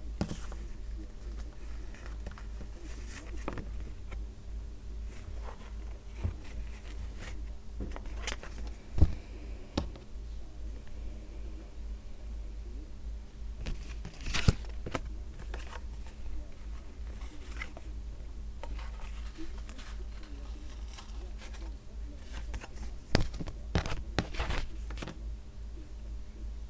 Jamieson & Smith, Shetland Islands, UK - Ella making up shade cards
This is the sound of Ella assembling shade cards for prospective buyers of Shetland wool. Jamieson & Smith stock an amazing number of different shades, and distant buyers need to be able to see tiny samples of all of these. What you can hear is Ella taking balls of the different shades out of a plastic storage bag, cutting small lengths of them, and then tying them onto pieces of card with the shade numbers corresponding to the shades written on them. The sound in Jamieson & Smith is lovely; a mellow, woody tone, with the softness of a place that is stacked floor to ceiling with amazing knitted things and objects comprised of wool.
August 2013